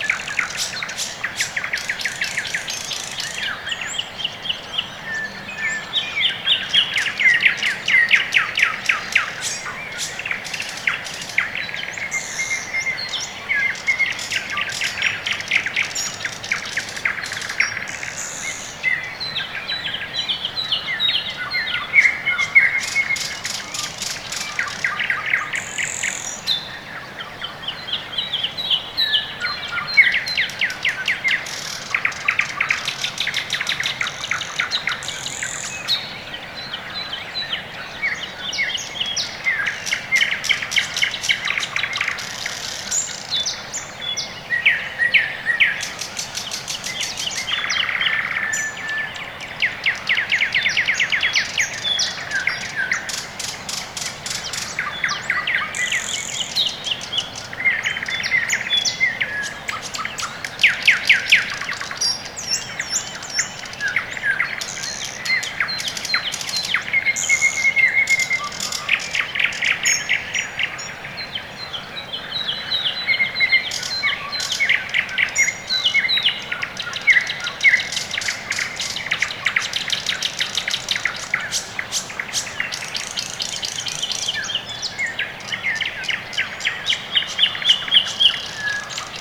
район Орехово-Борисово Северное, Москва, Россия - Morning birds
Quiet early morning.
Tech: Sony ECM-MS2 -> Marantz PMD-661.
Processing: iZotope RXII (Eq, Gain).